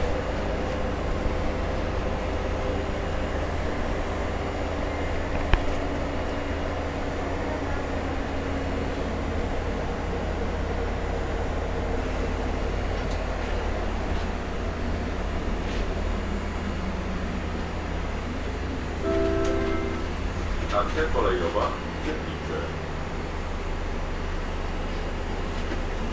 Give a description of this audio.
Recording of a bus ride. Recorded with Olympus LS-P4.